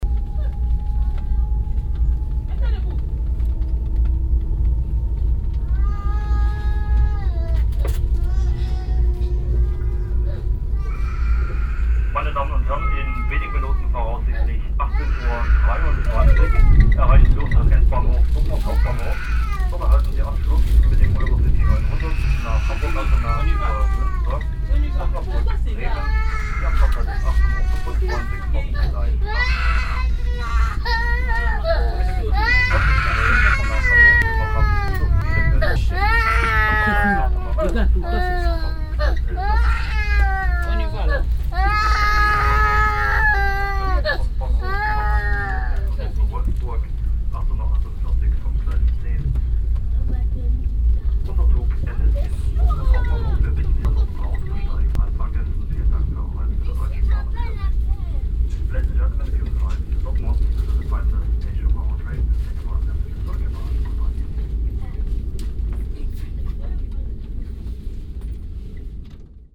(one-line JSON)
{"title": "im ICE - anfahrt auf dortmund hbf", "description": "im zug, kindergeschrei, bremsgeräusche, zugansage\nsoundmap nrw: topographic field recordings & social ambiences", "latitude": "51.50", "longitude": "7.43", "altitude": "92", "timezone": "GMT+1"}